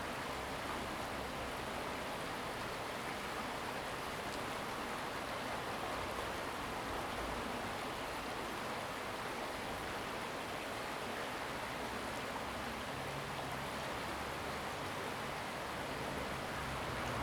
The sound of water streams, Bird calls, Below the bridge
Zoom H2n MS+XY